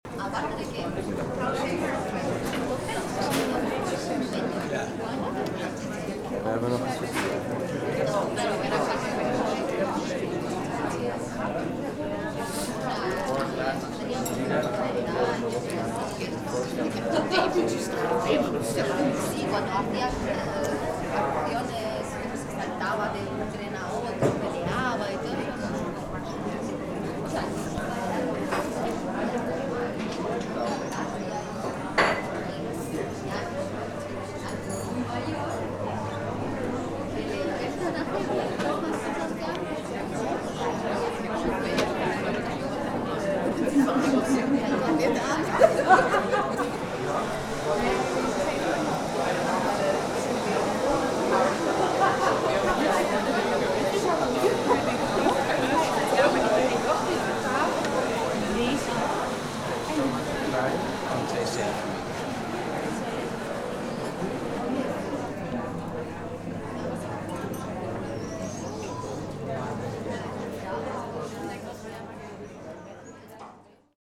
Rotterdam, Westblaak, Cinerama Lounge - Cinerama Lounge
People having drinks & after-movie talks, in the lounge of the Cinerama movie theater.
Rotterdam, The Netherlands, March 2010